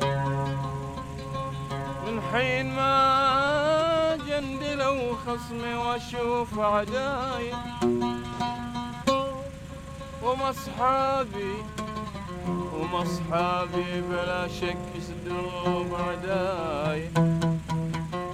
Avenue, الساية،،, الساية، Bahreïn - Duo de musiciens - Busaiteen Beach - Barhain
Duo Oud/Darbouka - Barhain
Busaiteen Beach
En fond sonore, le groupe électrogène. Malgré mes demandes répétées, ils n'ont ni voulu l'éteindre ni se déplacer...
محافظة المحرق, البحرين, 14 June, 19:00